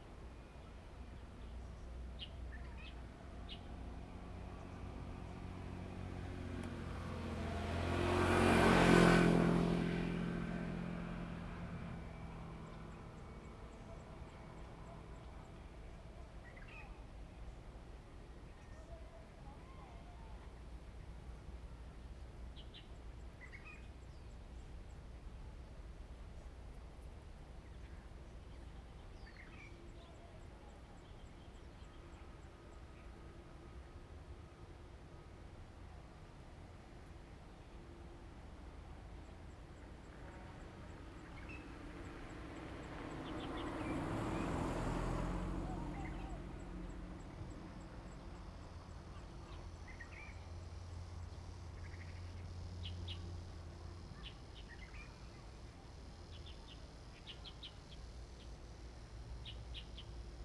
中華路, Dayuan Dist., Taoyuan City - The plane flew through
Landing, The plane flew through, traffic sound, birds sound
Zoom h2n MS+XY
Taoyuan City, Taiwan, 18 August 2017, ~16:00